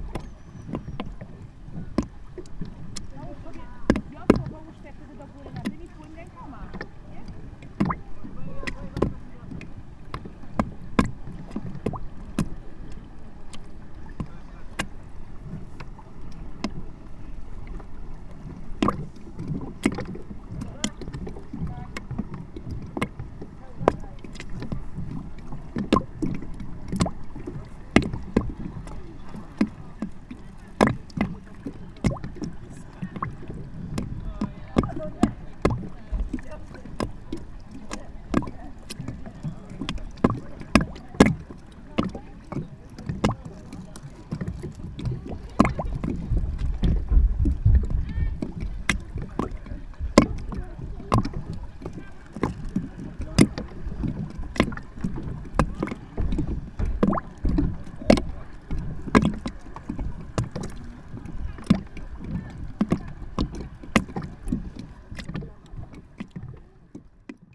Lusowo, Polska - water against the platform
water splashing in the depressions of a plastic platform, conversations of people spending time at the lake, duck calls. (roland r-07)
województwo wielkopolskie, Polska, 29 August 2020